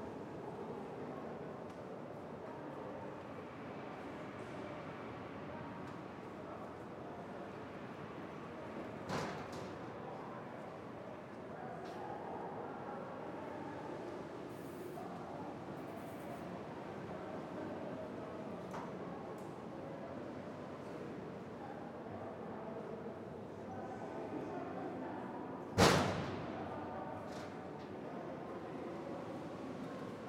{"title": "Rue des Tribunaux, Saint-Omer, France - St-Omer-Cathédrale", "date": "2022-02-20 16:00:00", "description": "Cathédrale de St-Omer - intérieur\nJour de grand vent\nambiance.", "latitude": "50.75", "longitude": "2.25", "altitude": "25", "timezone": "Europe/Paris"}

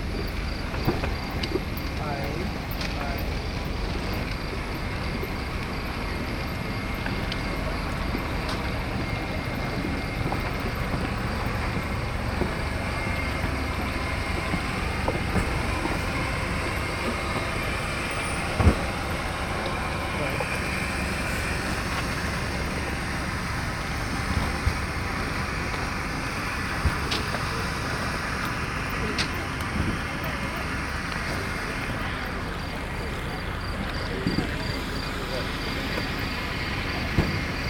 {"title": "Airport, Alicante, Spain - (03 BI) Leaving Airplane", "date": "2016-11-03 00:05:00", "description": "Binaural recording of a leaving an airplane and going through airfield to airport buildings.\nRecorded with Soundman OKM on Zoom H2n", "latitude": "38.28", "longitude": "-0.55", "altitude": "29", "timezone": "Europe/Madrid"}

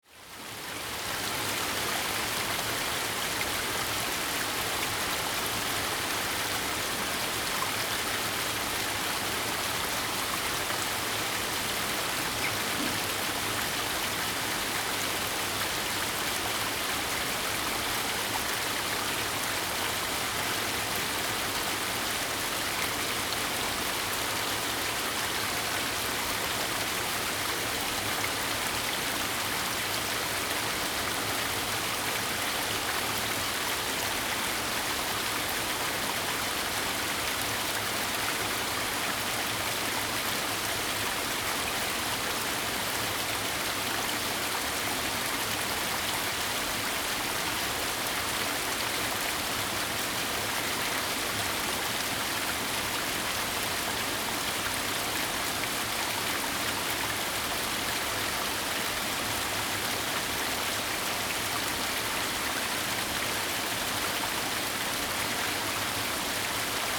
{"title": "Wufeng Rd., Jiaoxi Township, Yilan County - Slope of the cascade", "date": "2016-12-07 09:23:00", "description": "Slope of the cascade, Waterfalls and rivers\nZoom H2n MS+ XY", "latitude": "24.83", "longitude": "121.75", "altitude": "145", "timezone": "GMT+1"}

